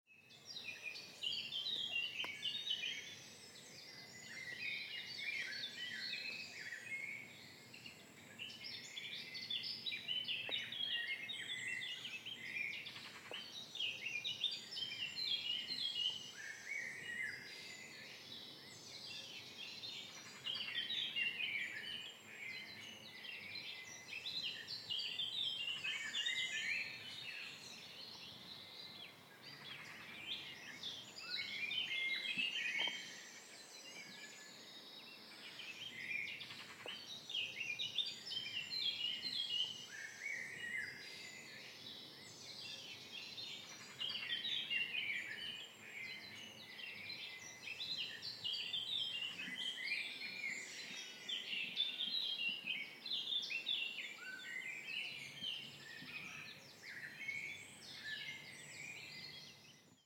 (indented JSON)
{
  "title": "Méry-sur-Oise, France - Morning Birds at the entrance of the Quarry Hennocque",
  "date": "2015-01-07 05:34:00",
  "description": "Les oiseaux chantent à l'aube, Devant l'entrée des carrières abandonnées d'Hennocque.\nMorning Birds at the entrance of the Quarry Hennocque",
  "latitude": "49.07",
  "longitude": "2.20",
  "altitude": "48",
  "timezone": "GMT+1"
}